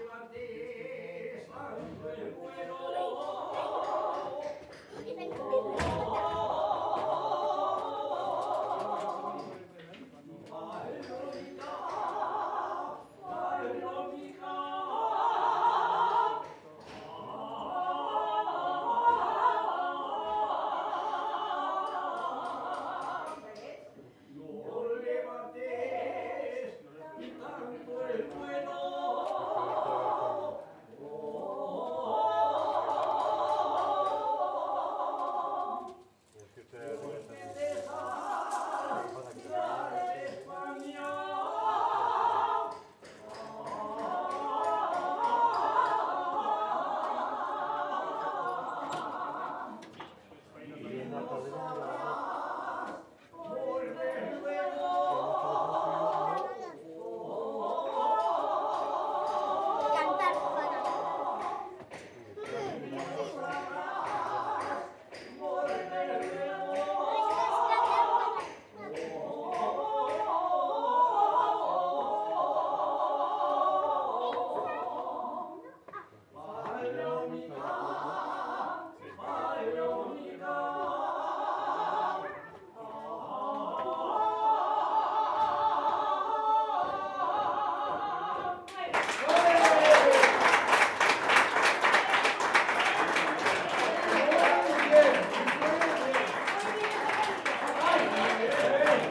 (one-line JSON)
{"title": "Calle Carretera, Villarquemado, Teruel, Spain - Jota in Villarquemado", "date": "2020-09-12 17:16:00", "description": "2 Jotas, regional traditional songs, sang at a celebration in a restaurant. From outside in the distance is someone hammering, and nearby are sounds from people talking outside, children playing, and the road.\nRecorded on a Zoom H2n internal mics.", "latitude": "40.52", "longitude": "-1.26", "altitude": "1004", "timezone": "Europe/Madrid"}